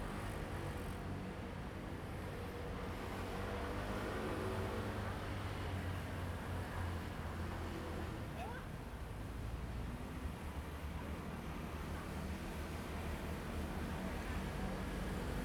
新農街二段209巷, Yangmei Dist., Taoyuan City - Railroad Crossing
Railroad Crossing, Traffic sound, The train runs through
Zoom H2n MS+XY